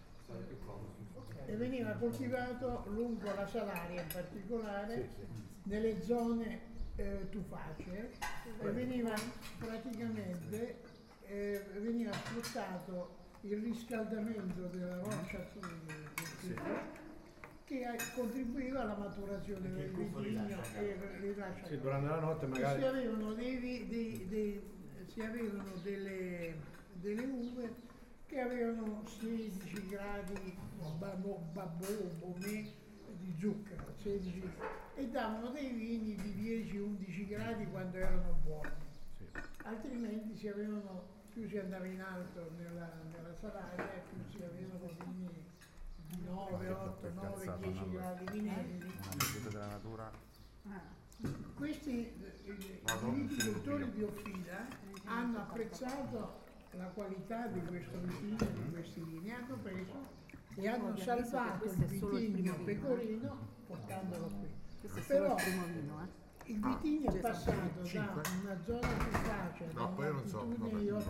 Offida AP, Italia - mach den mund weit auf apri bene la bocca convivio
food gallery-nutrirsi di arte cultura territorio
#foodgallery
31 May 2013, ~14:00